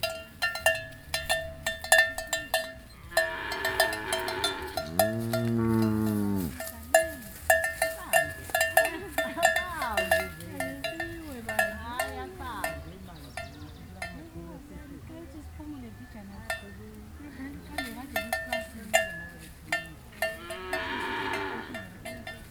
Lupane, Zimbabwe - On the way to the village borehole…
I’m joining Thembi and her sister fetching water from the local borehole. We walk for about 20 minutes through the bush before reaching the borehole….
The recordings are archived at:
26 October, ~14:00